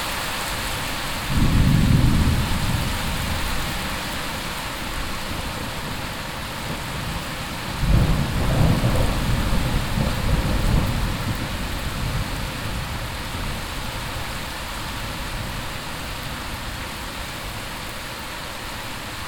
aubignan, rain and thunderstorm
After a hot summer week an evening thunderstorm with heavy rain. The sound of the clashing rain and thunder echoing in the valley. Recording 01 of two
topographic field recordings - international ambiences and scapes
29 August 2011, 17:40